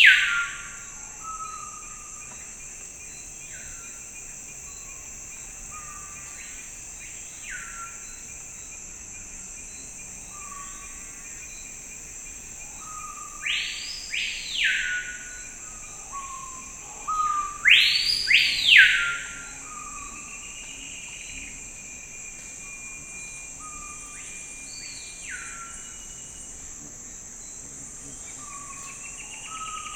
Tauary (Amazonian Rainforest) - Screaming Piha in the Amazonian Rain Forest